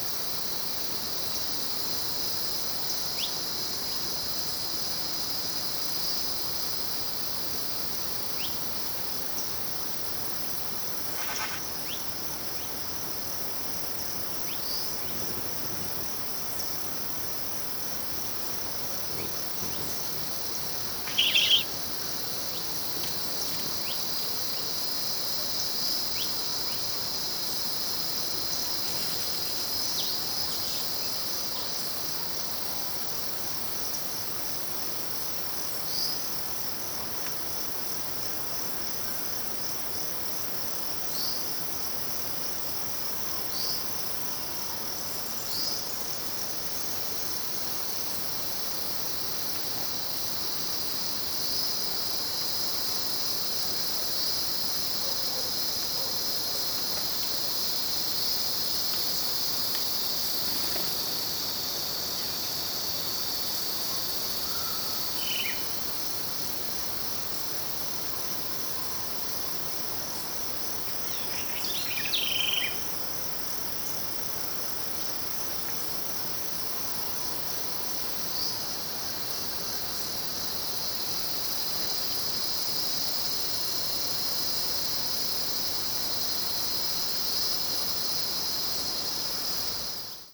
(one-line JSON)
{"title": "Serinha - Small wood in the Brazilian countryside, insects and birds", "date": "2018-03-10 16:00:00", "description": "Close to the small village of Serinha, during the day, some insects, a few birds, the river far away in background and sometimes light voices (far away).\nRecorded with a MS Schoeps in a CINELA Windscreen\nSound Ref: BR-180310T03\nGPS: -22.388273, -44.552840", "latitude": "-22.39", "longitude": "-44.55", "altitude": "843", "timezone": "America/Sao_Paulo"}